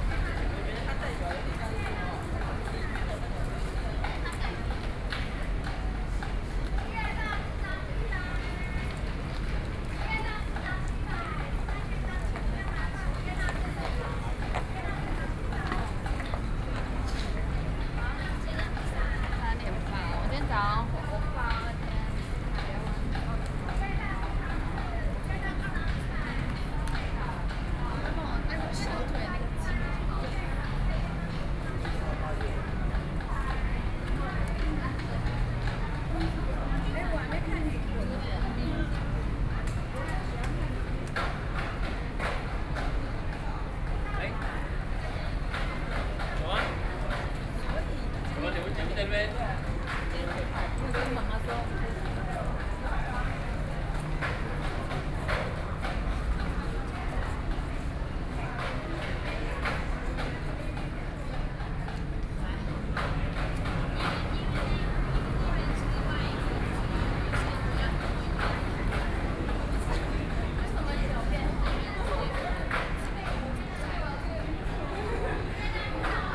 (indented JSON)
{
  "title": "Chiang Kai-Shek Memorial Hall Station, Taipei - MRT entrance",
  "date": "2013-05-24 21:31:00",
  "description": "MRT entrance, Sony PCM D50 + Soundman OKM II",
  "latitude": "25.04",
  "longitude": "121.52",
  "altitude": "9",
  "timezone": "Asia/Taipei"
}